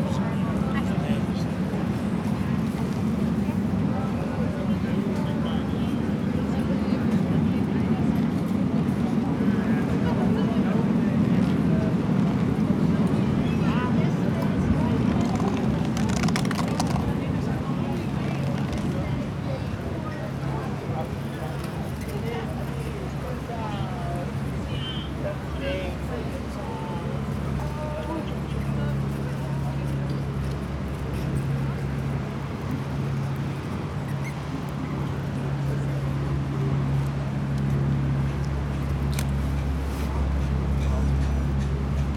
Porto, at the bank of Douro river - into a passageway
walking on the promenade. sounds of tourists enjoying the day, having food at the restaurants. walking into a passage under the buildings, passing near a window of a kitchen and a huge vent.
Porto, Portugal, 1 October 2013